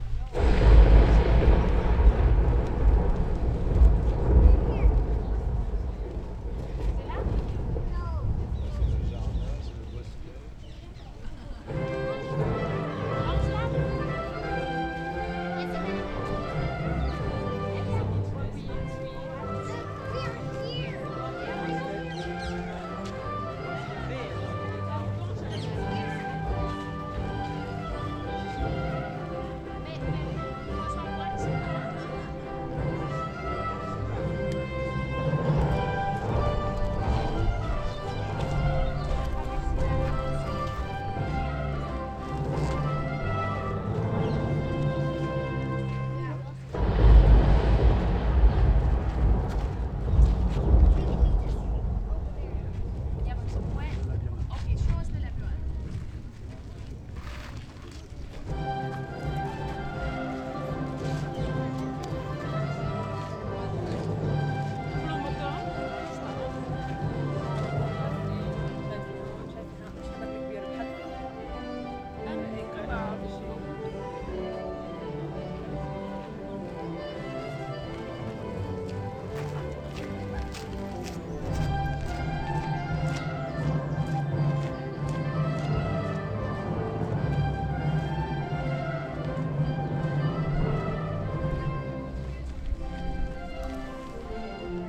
Jardins du château de Versailles, Place d'Armes, Versailles, France - Bosquets mis en musique
Morceau de musique dans un des bosquets.
On entend les promeneurs alentour.
Music playing in one of the groves.
Tourists can be heard nearby.